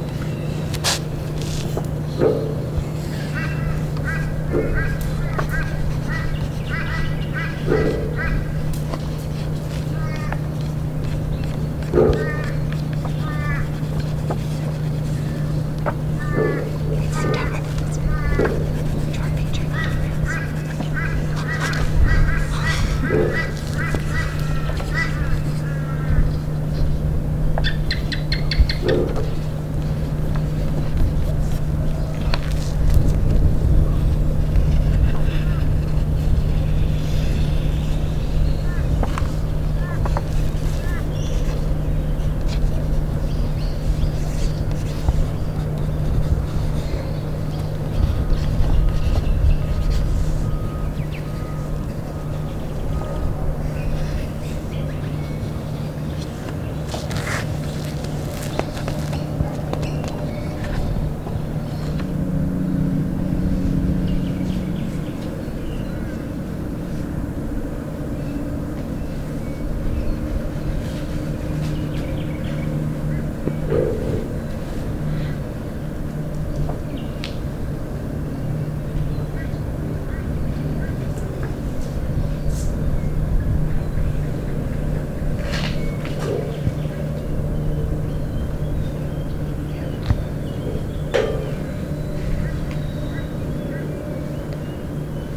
Currumbin Waters QLD, Australia - Drawing sound maps
Under the play field shelter, children shut their eyes and listen to the sounds around them, then interpret the sounds they can hear into sound maps, drawing with pencils on paper. Listen out for the gecko and other sounds too!
Part of a September holiday 'Sounds in Nature' workshop run by Gabrielle Fry, teaching children how to use recording equipment to appreciate and record sounds in familiar surroundings. Recorded using a Rode NTG-2 and Zoom H4N.